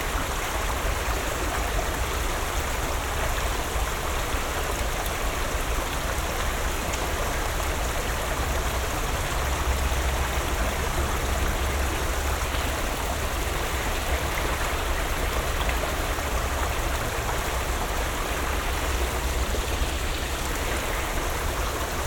refrath, im letsch, holzbrücke, kleiner bach
abfahrt eines pkw, das plätschern des abfliessenden baches unter der brücke, morgendliche vögel udn mückenschwärme im sonnenlicht
soundmap nrw - social ambiences - sound in public spaces - in & outdoor nearfield recordings11:24